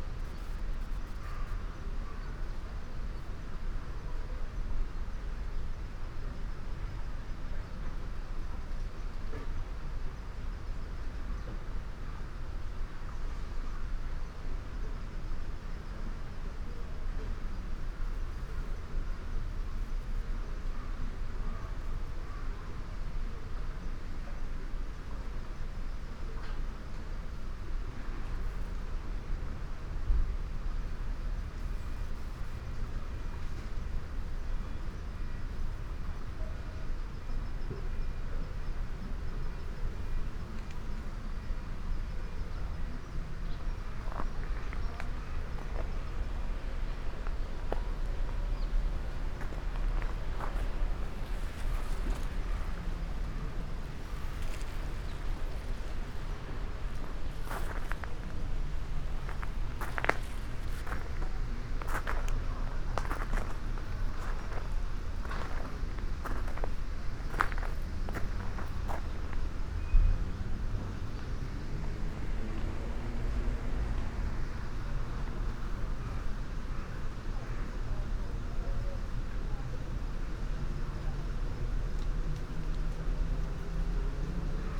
Taizoin, zen garden, Kyoto - seven stones and white sand, eight stones and black sand
graveled ocean
mute in his reality fragments
- analogue melancholy -
Kyoto Prefecture, Japan, November 2014